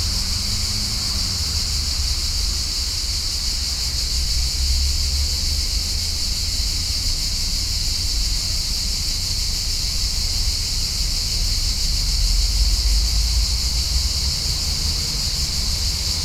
{
  "title": "Parque Vale do Silêncio, Lisbon, Portugal - Summer cicadas",
  "date": "2013-08-20 11:43:00",
  "description": "High volume cicadas on the park, Church-audio binaurals + zoom H4n",
  "latitude": "38.77",
  "longitude": "-9.12",
  "altitude": "83",
  "timezone": "Europe/Lisbon"
}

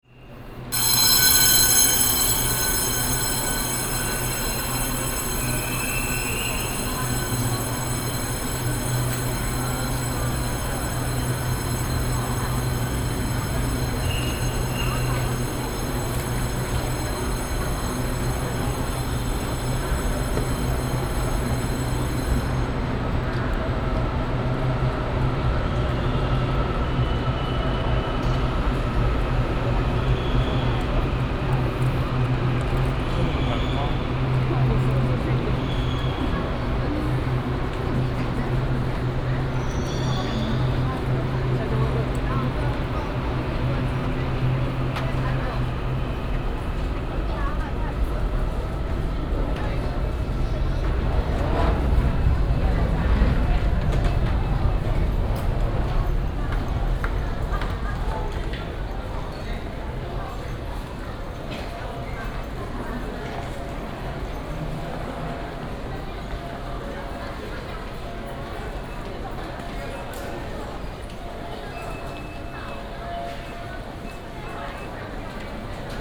{
  "title": "臺中火車站, Taichung City - walking in the Station",
  "date": "2017-04-29 12:49:00",
  "description": "In the station platform, From the station platform to the station hall",
  "latitude": "24.14",
  "longitude": "120.69",
  "altitude": "80",
  "timezone": "Asia/Taipei"
}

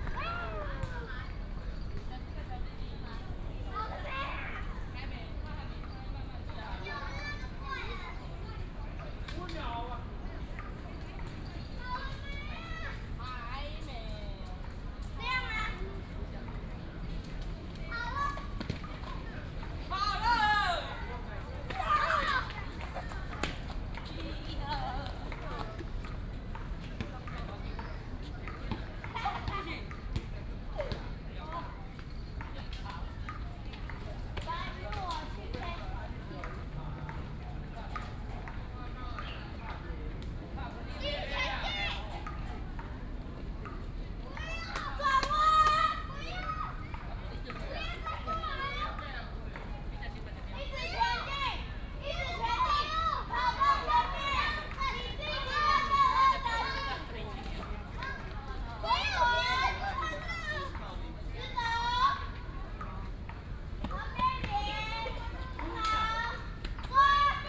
{
  "title": "石雕公園, 板橋區, New Taipei City - Many elderly and children",
  "date": "2015-07-29 17:22:00",
  "description": "Many elderly and children, in the Park",
  "latitude": "25.03",
  "longitude": "121.47",
  "altitude": "11",
  "timezone": "Asia/Taipei"
}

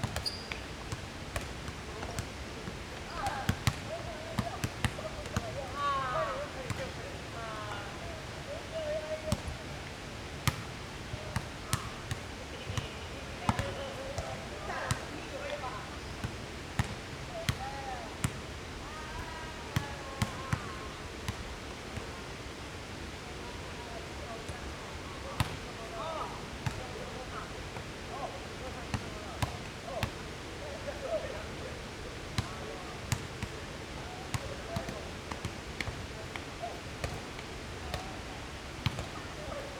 {
  "title": "Ln., Sec., Xinwu Rd., Xindian Dist. - the basketball court",
  "date": "2011-12-18 15:16:00",
  "description": "in the basketball court next to the stream\nZoom H4n + Rode NT4",
  "latitude": "24.95",
  "longitude": "121.55",
  "altitude": "37",
  "timezone": "Asia/Taipei"
}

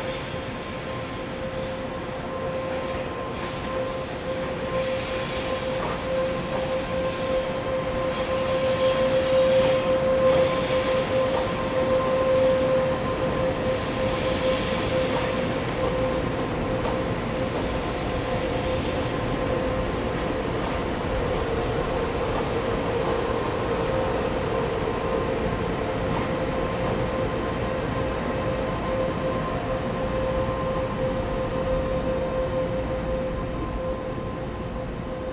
{
  "title": "Chiayi Station, Chiayi - On the bridge",
  "date": "2011-07-07 18:56:00",
  "latitude": "23.48",
  "longitude": "120.44",
  "altitude": "34",
  "timezone": "Asia/Taipei"
}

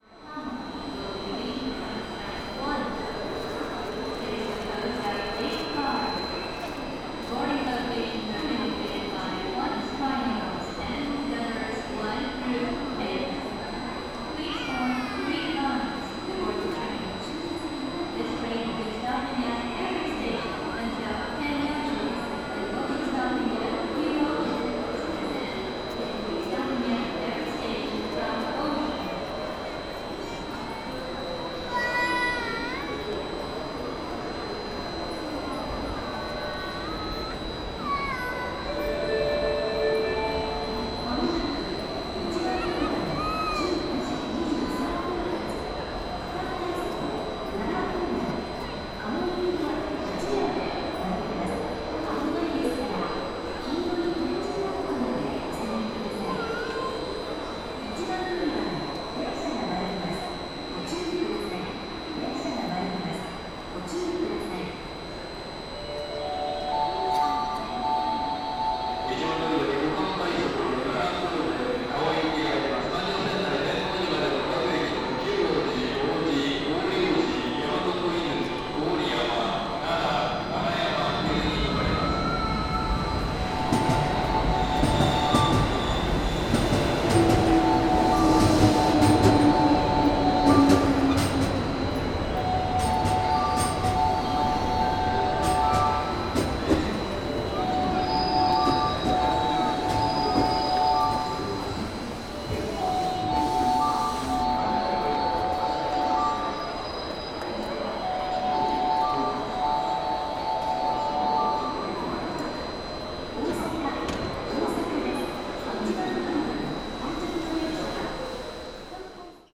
{
  "title": "Osaka Station, platform - high pitch beam",
  "date": "2013-03-31 19:11:00",
  "description": "a high pitched sound of an unknown source torturing passengers on platform 1 at the Osaka train station.",
  "latitude": "34.70",
  "longitude": "135.50",
  "altitude": "16",
  "timezone": "Asia/Tokyo"
}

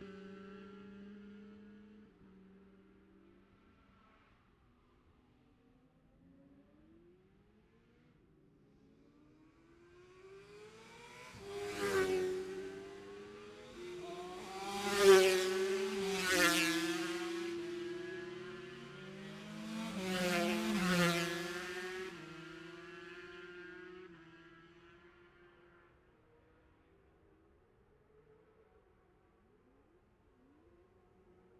{
  "title": "Jacksons Ln, Scarborough, UK - Gold Cup 2020 ...",
  "date": "2020-09-11 14:35:00",
  "description": "Gold Cup 2020 ... 2 & 4 strokes qualifying ... Memorial Out ... dpa 4060s to Zoom H5 ...",
  "latitude": "54.27",
  "longitude": "-0.41",
  "altitude": "144",
  "timezone": "Europe/London"
}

